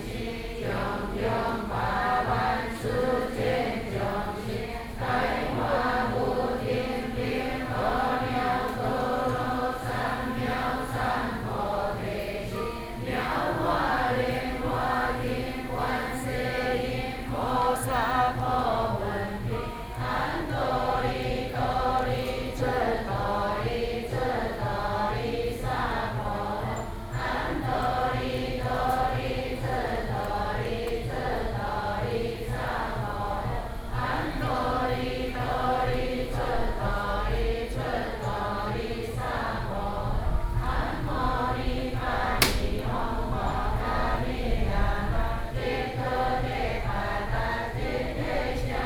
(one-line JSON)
{"title": "Mengjia Longshan Temple, 萬華區, Taipei City - Chant Buddhist scriptures", "date": "2012-11-03 08:39:00", "latitude": "25.04", "longitude": "121.50", "altitude": "9", "timezone": "Asia/Taipei"}